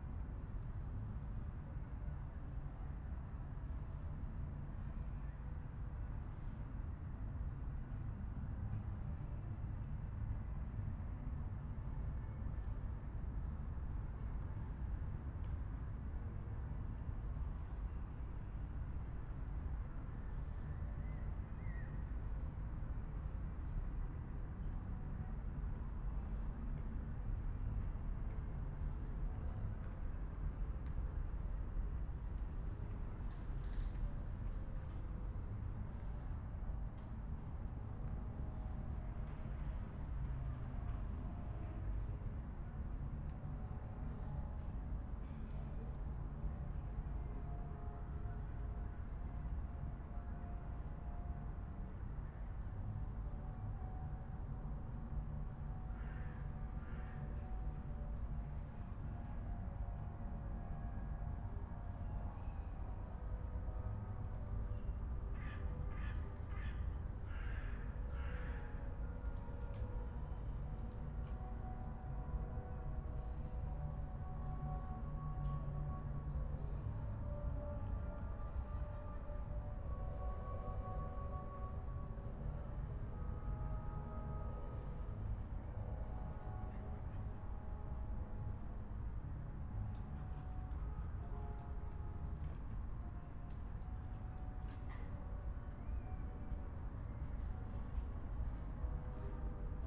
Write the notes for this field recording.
Train are passing by. In the same time a concert is taking place near Floridsdorf bridge.